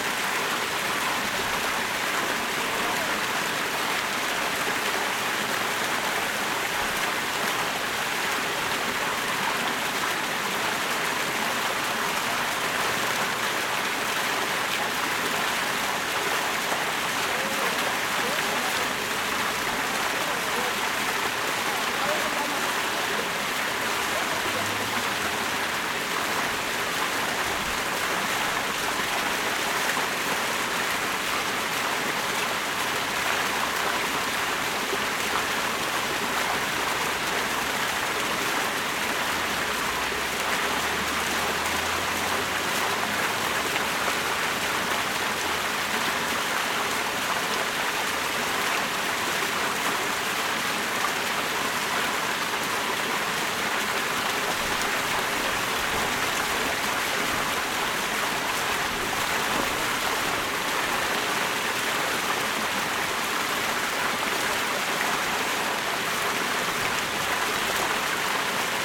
United Nations Plaza A, New York, NY, USA - Small waterfall at United Nations Plaza
Sounds of water from a small waterfall at 845 United Nations Plaza.